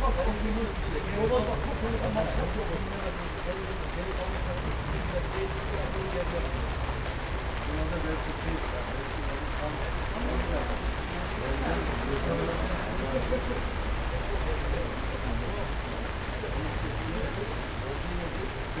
berlin, sanderstraße: hinter imbiss, unter sonnenschirm - the city, the country & me: under sunshade of a fried chicken takeaway
people talking in front of fried chicken takeaway, busy staff, it begins to rain
the city, the country & me: july 19, 2012
99 facets of rain
contribution for world listening day